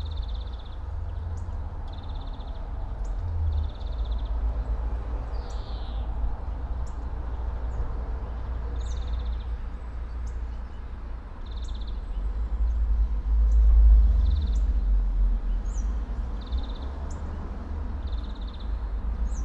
all the mornings of the ... - feb 21 2013 thu
Maribor, Slovenia, 21 February 2013, 07:30